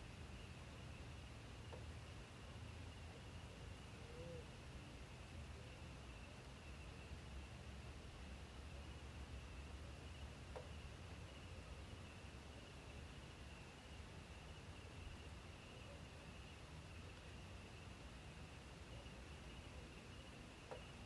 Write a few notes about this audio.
Cascajal in the village of Córdova Bajo in the city of Chiquinquirá, Boyacá, Colombia. Rural area - land where a quarry was abandoned long ago for not complying with environmental licenses. Place of ascent by road to 6 km of the city.